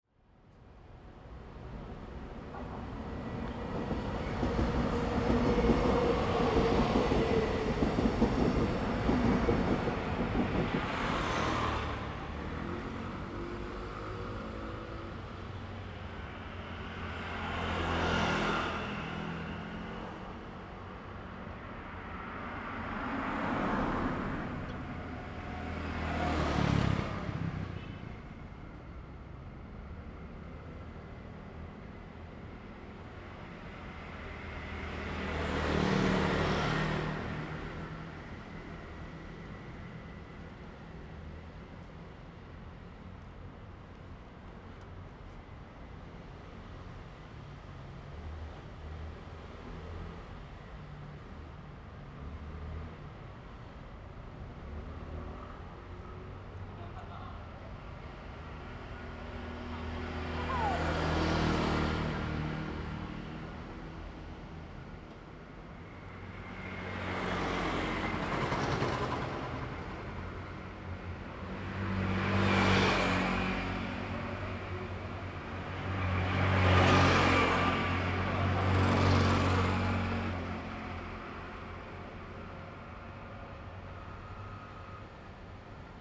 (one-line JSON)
{"title": "Ln., Qingnian Rd., Tainan City - In the vicinity of the tracks", "date": "2017-01-31 13:54:00", "description": "In the vicinity of the tracks, Traffic sound, Train traveling through\nZoom H2n MS+XY", "latitude": "22.99", "longitude": "120.21", "altitude": "23", "timezone": "GMT+1"}